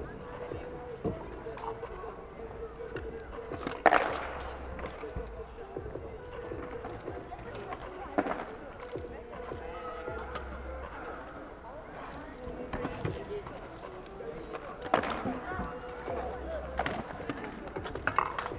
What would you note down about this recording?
kids and youngsters practising with scooters, (Sony PCM D50)